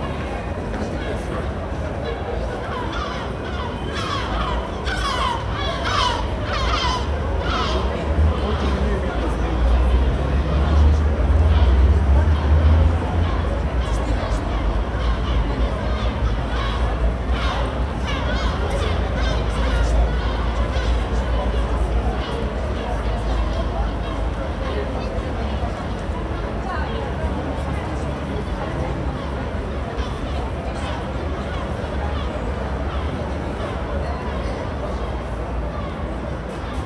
City sounds @ summer time. recorded from balcony of Radio Rijeka (5m above street level)you can hear the seagulls and various sounds of the city.
8 July 2008, 23:22